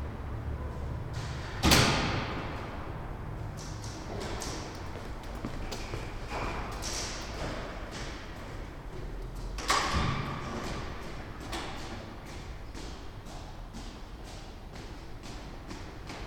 Bundesbank, Leibnizstr. - Eingangshalle / entry hall
04.12.2008, 11:30: Eingangshalle der Deutschen Bundesbank / entry hall ambience federal bank of Germany, Berlin